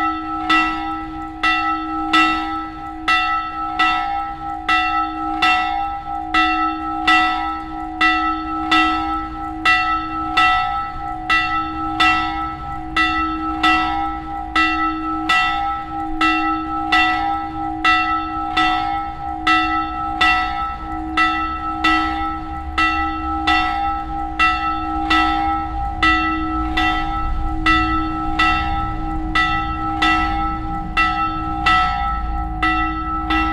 tandel, church, bells
The sound of the noon church bells on a sunny, mild windy late summer day. In the background the sound of traffic from the nearby main road.
Tandel, Kirche, Glocken
Das Geräusch der Mittagsglocken der Kirche an einem sonnigen milden windigen Spätsommertag. Im Hintergrund das Geräusch von Verkehr von der nahen Hauptstraße.
Tandel, église, cloches
Le son du carillon de midi à l’église enregistré un jour d’été ensoleillé et légèrement venteux. Dans le fond, on entend le bruit du trafic sur la grand route proche.
Tandel, Luxembourg